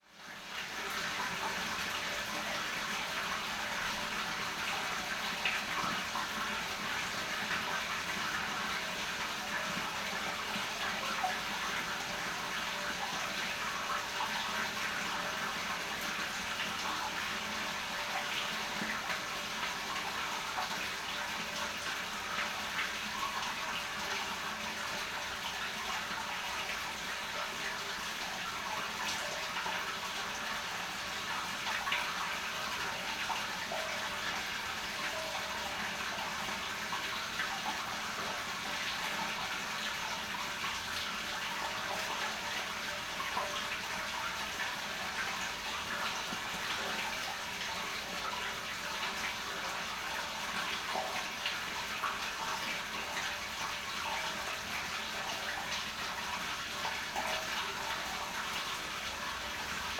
Beselich Niedertiefenbach, Grabenstr. - water in drain
little creek and other waters running in a canal below the street.